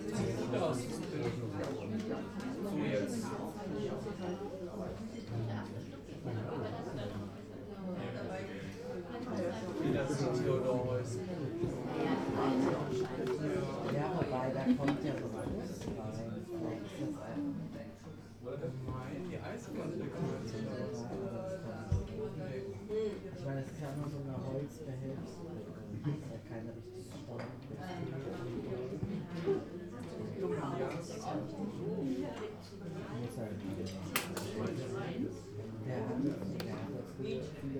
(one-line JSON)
{"title": "erbach, rheinallee: weinstube - the city, the country & me: wine tavern", "date": "2010-10-17 19:02:00", "description": "wine tavern \"maximilianshof\" of the wine-growing estate oetinger\nthe city, the country & me: october 17, 2010", "latitude": "50.02", "longitude": "8.10", "altitude": "86", "timezone": "Europe/Berlin"}